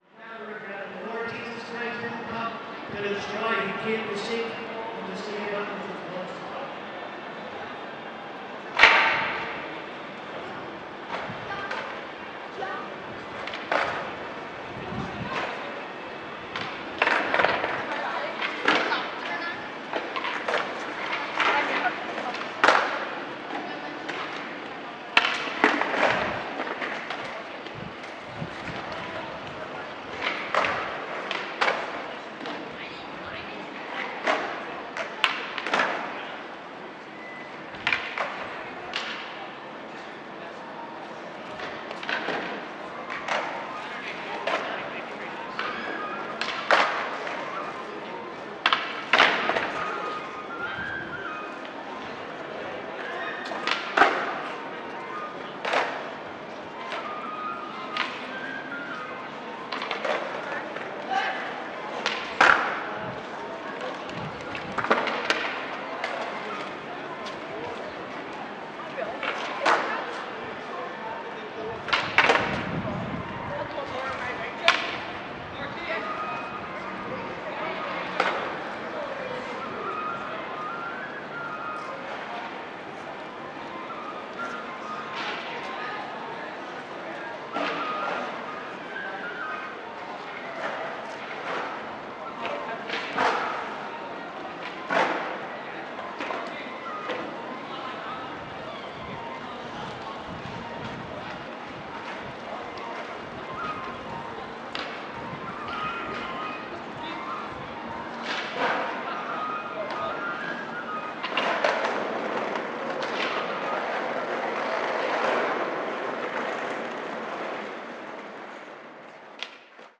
Part Third Floor, Belfast, UK - Arthur Square
Recording of an individual gospel preacher while skateboarders, pedestrians, and a flautist. The public setting resembles some of the pre-covid conditions of what I remember, an intertwined community of sounds.